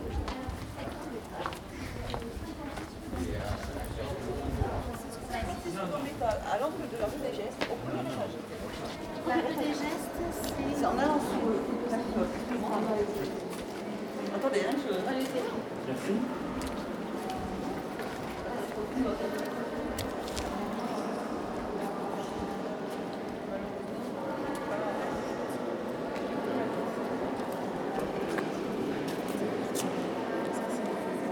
Église des Jacobins, Place des Jacobins, Toulouse, France - Fiddle player & inside église des Jacobins
I first walked past a man playing the fiddle in the street, then entered the hall of the church. This was recorded on the European Heritage day, so entrance to all museums was free.
Recorded with zoom H1, hi-pass filter used in Audacity to reduce wind noise.